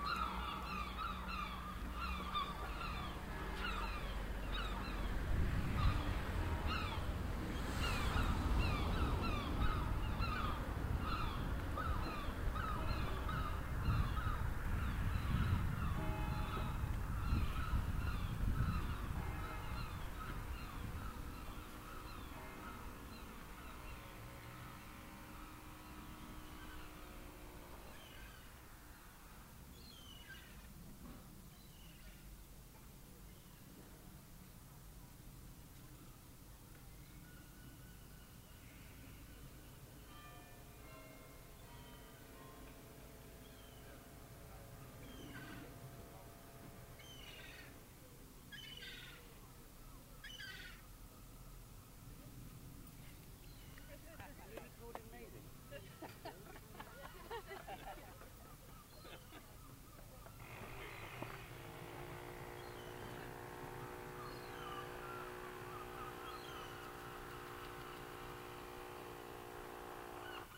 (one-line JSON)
{"title": "Bridport, Dorset, UK - church bells", "date": "2013-07-18 12:00:00", "description": "soundwalk with binaurals from south street, through St Mary's church, down towpath towards West Bay including weir and waterwheel at the Brewery and finally the A35 underpass.", "latitude": "50.73", "longitude": "-2.76", "altitude": "6", "timezone": "Europe/London"}